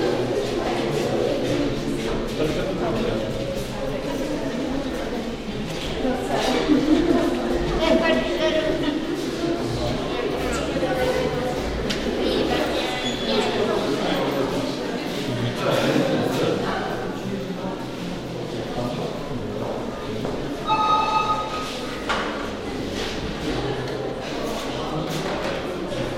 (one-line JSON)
{"date": "2009-02-21 20:01:00", "description": "art opening, Kronika Gallery Bytom Poland", "latitude": "50.35", "longitude": "18.92", "altitude": "278", "timezone": "Europe/Berlin"}